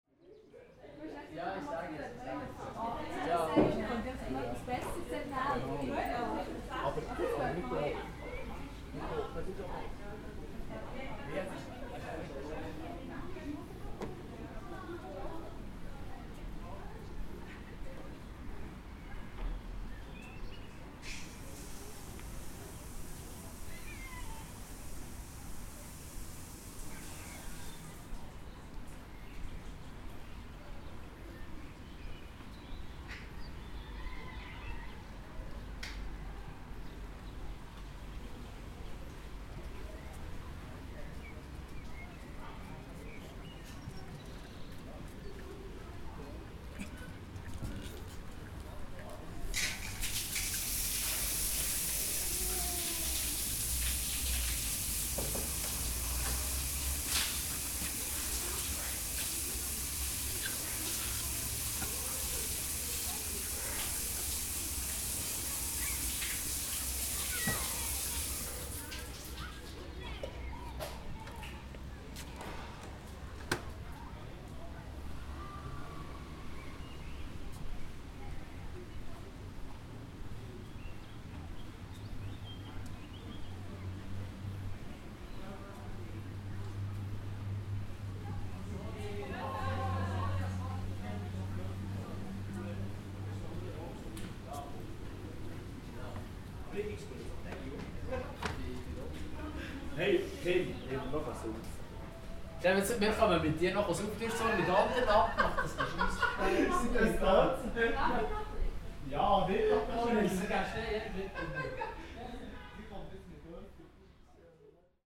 Duschen im Marzilibad, Aare 16 Grad, da ist die Dusche geradezu warm